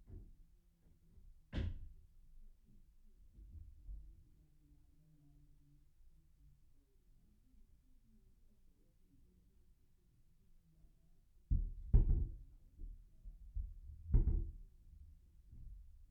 Unnamed Road, Malton, UK - Ill hold it ... you hit it ...
I'll hold it ... you hit it ... roofers retiling a house ... lavalier mics clipped to sandwich box in stairwell ...